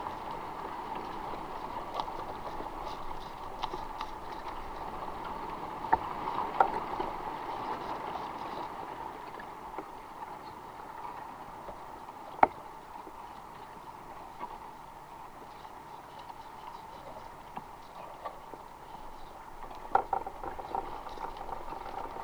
{
  "title": "미조항 근처에서 대왕태나무 giant bamboo near Mijo village",
  "date": "2022-02-27 13:00:00",
  "description": "8 bamboos sampled in a wild stand of giant bamboo...coastal sea breeze influence under...flanked by heavy industry tourism roading infrastructure customary to contemporary Korea",
  "latitude": "34.71",
  "longitude": "128.02",
  "altitude": "49",
  "timezone": "Asia/Seoul"
}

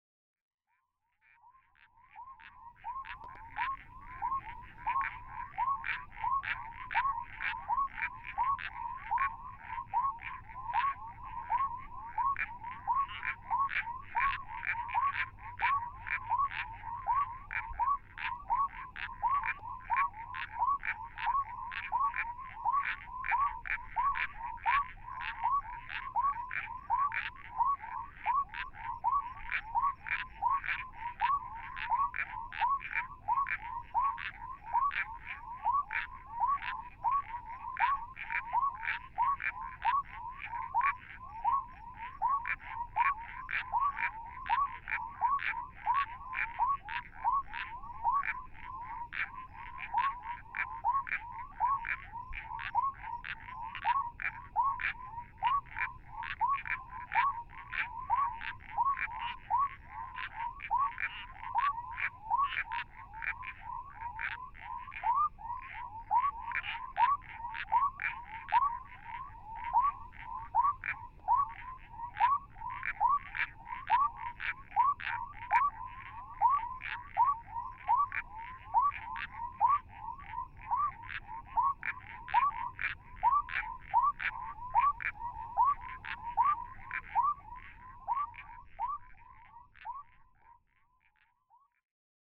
{
  "title": "Anchorage Ln, Kalbarri WA, Australien - Frogs after sunset 2",
  "date": "2012-05-11 20:05:00",
  "description": "Frogs calling from burrows in a marshy area. Recorded with a Sound Devices 702 field recorder and a modified Crown - SASS setup incorporating two Sennheiser mkh 20 microphones.",
  "latitude": "-27.70",
  "longitude": "114.17",
  "altitude": "12",
  "timezone": "Australia/Perth"
}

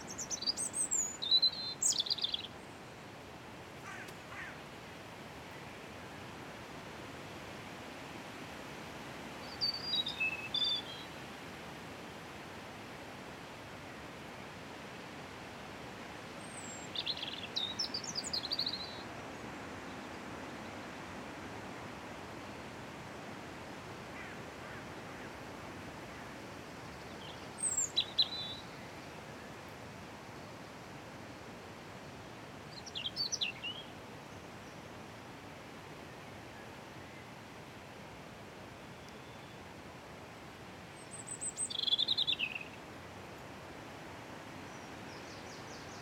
{
  "title": "Atlantic Pond, Ballintemple, Cork, Ireland - Wind in Trees, Robin Singing",
  "date": "2020-05-04 20:55:00",
  "description": "A windy evening with the sky turning pink and a bright moon. I sat down on the bench and noticed the Robin singing behind me, so I balanced my recorder on my bicycle seat facing away from the pond.\nRecorded with a Roland R-07.",
  "latitude": "51.90",
  "longitude": "-8.43",
  "altitude": "4",
  "timezone": "Europe/Dublin"
}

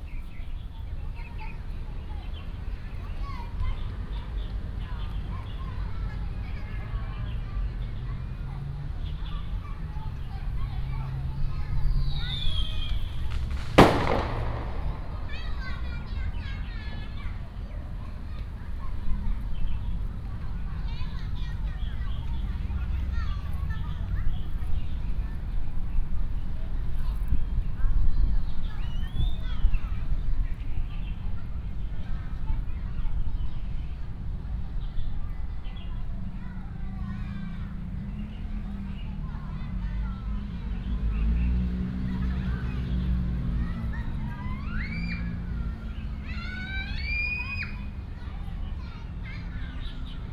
{
  "title": "老街溪河川教育中心, Zhongli District - Birds and child",
  "date": "2017-02-07 16:23:00",
  "description": "Child game sound, Bird calls, Firecrackers sound",
  "latitude": "24.95",
  "longitude": "121.22",
  "altitude": "140",
  "timezone": "GMT+1"
}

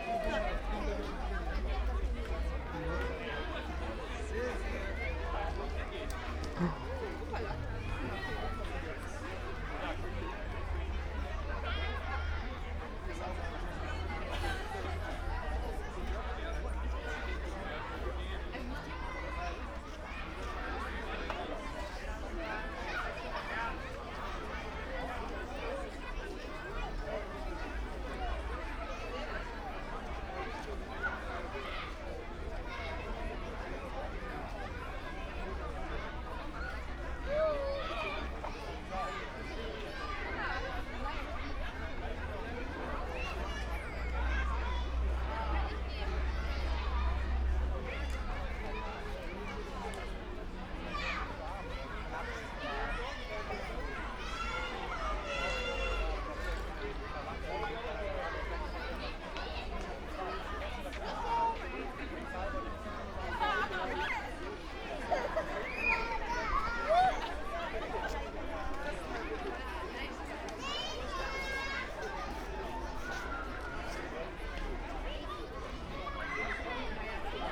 {
  "title": "Playground, Wallschule, Peterstrasse, Oldenburg, Deutschland - Sommerfest",
  "date": "2018-05-25 17:50:00",
  "description": "late afternoon, nice warm spring day, the Sommerfest ends, kids and parents leaving\n(Sony PCM D50, Primo EM172)",
  "latitude": "53.14",
  "longitude": "8.21",
  "altitude": "5",
  "timezone": "Europe/Berlin"
}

